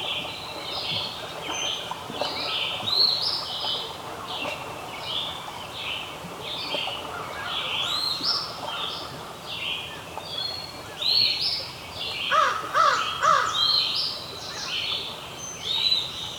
Kagawong, ON, Canada - Dawn chorus
Early morning birdsong, Lake Huron waves in distance. Recorded with LOM Uši Pro omni mics and Tascam DR-680mkII. EQ and levels postprocessing.